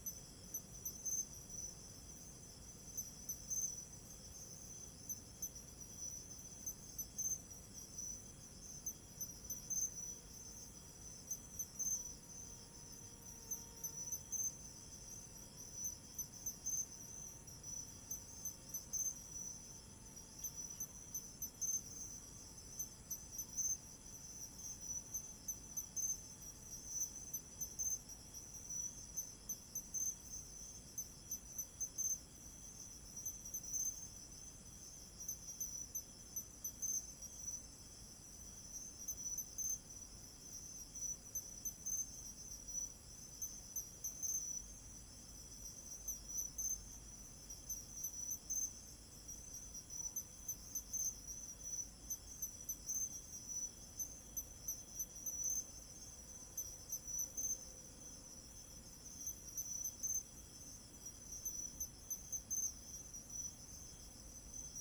Insects sound, Dog sounds, Zoom H2n MS+XY
Hsinchu City, Taiwan, September 2017